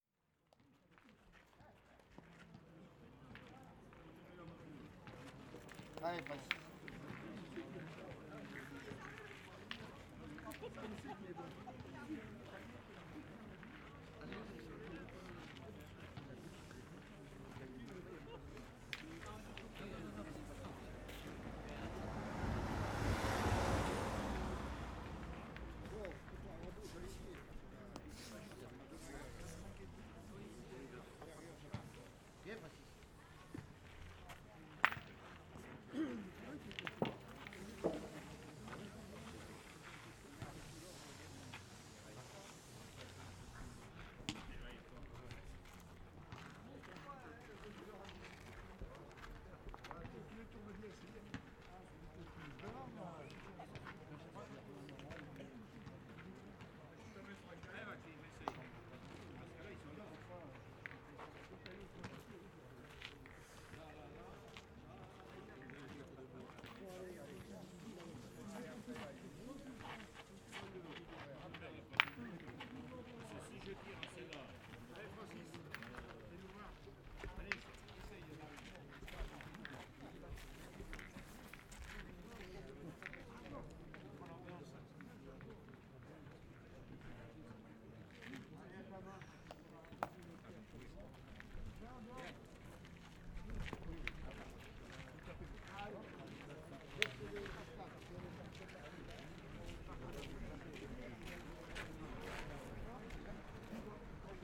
Rue de la Barbotière, Gujan-Mestras, France - Pétanque des anciens pêcheurs
Quand on revient vers la ville après avoir laissé derrière nous les cabanes de pêcheurs, je découvre de nombreux joueurs de pétanque. C'est sérieux. Nombreux ont les cheveux blancs et j'imagine sans peine qu'il s'agit d'anciens pêcheurs...
Enregistré pour le projet "Amusa Boca" produit par l'observatoire des imaginaires ruraux "Les nouveaux terriens".
Equipement : Zoom H6 et Built-in XY microphone